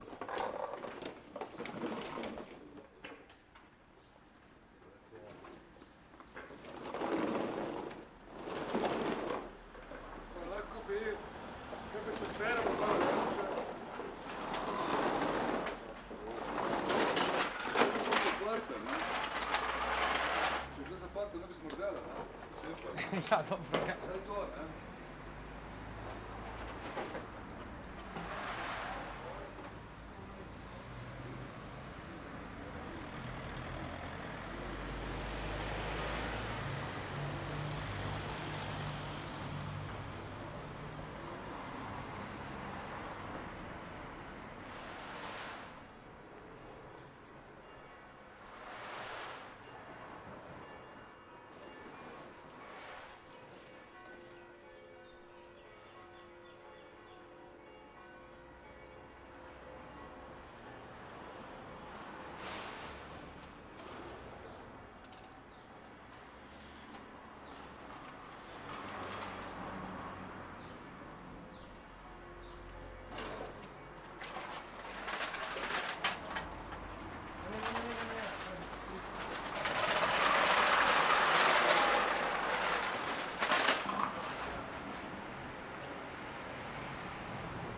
Carinarnica, Nova Gorica, Slovenija - Carinarnica
Posneto pred Carinarnico po koncu prvega dne delavnice.
Gorizia GO, Italy, 5 June 2017, ~19:00